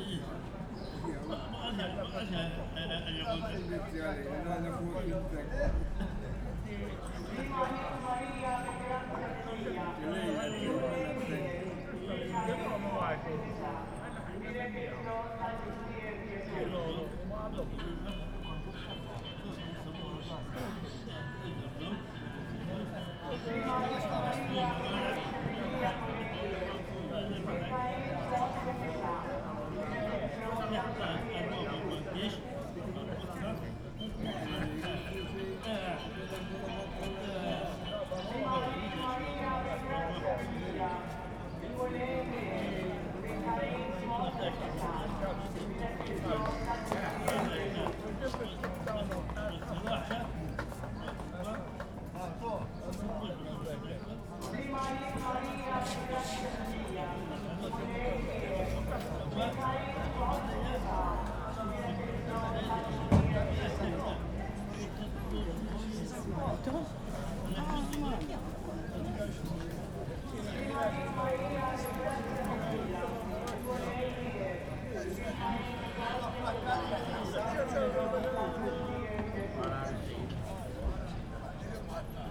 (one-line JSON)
{"title": "Misraħ ir-Repubblika, Żejtun, Malta - square ambience during procession", "date": "2017-04-07 18:35:00", "description": "Misraħ ir-Repubblika, Zejtun, old men sitting on benches in front of Zejtun Band Club talking, ambience of square during a procession\n(SD702, DPA4060)", "latitude": "35.85", "longitude": "14.53", "altitude": "62", "timezone": "Europe/Malta"}